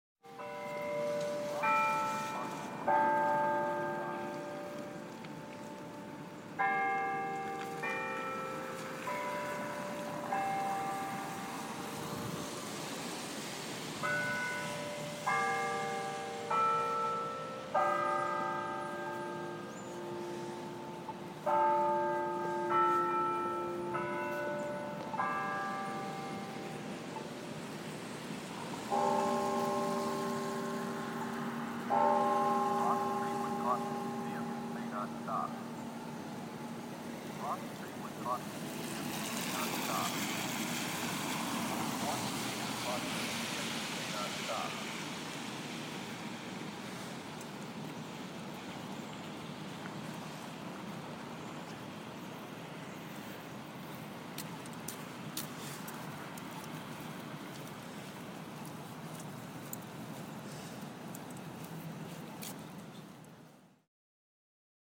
Muhlenberg College outside CA, West Chew Street, Allentown, PA, USA - Bell tower at 2 pm
recording of the 2 pm bells taken from across the street right outside the center for the arts on a cold and rainy day